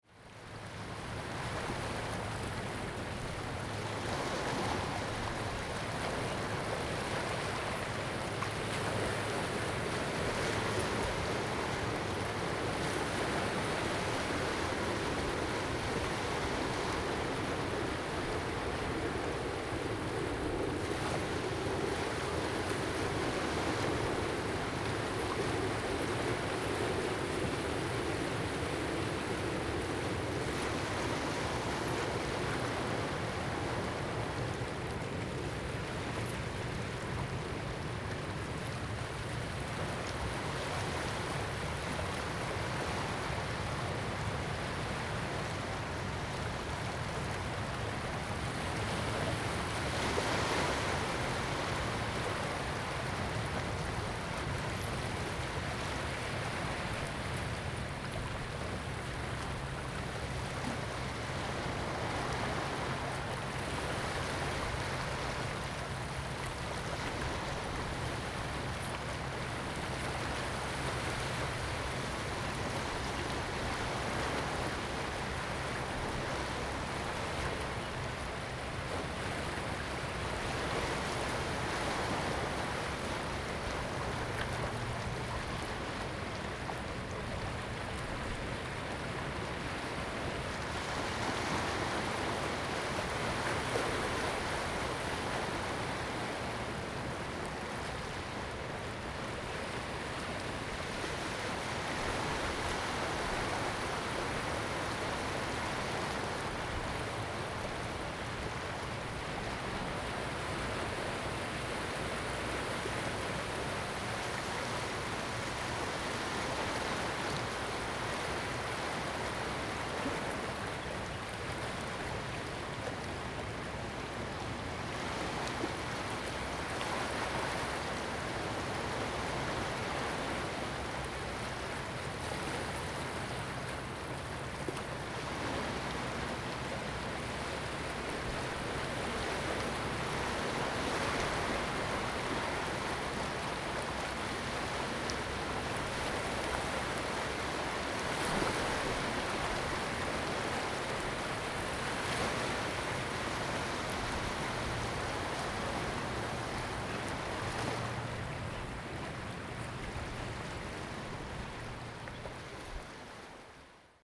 崁頂, Tamsui Dist., New Taipei City - waves
at the seaside, Sound waves, Aircraft flying through
Zoom H2n MS+XY
New Taipei City, Taiwan, 5 April 2016, 17:30